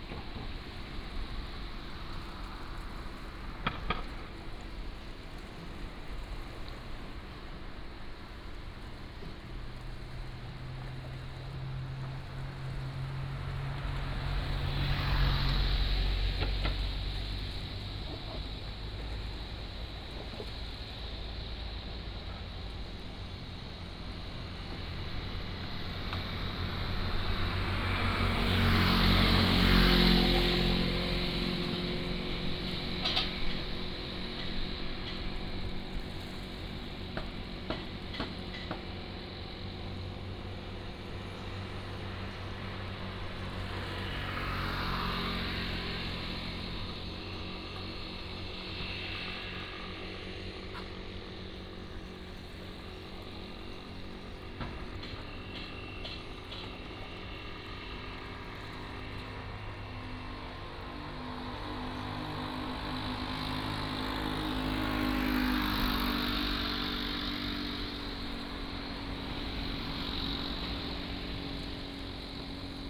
In the next port, Traffic Sound, There is the sound of distant construction